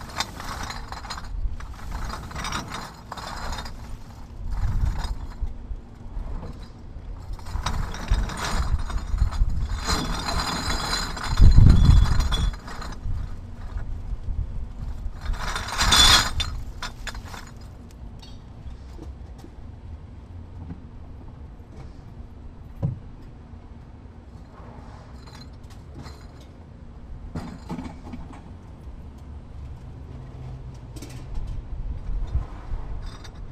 Northwest Berkeley, Berkeley, CA, USA - recycling center 3.
surprisingly clean and quiet recycling center ..... beer bottles return worth $14.17
19 July, 13:15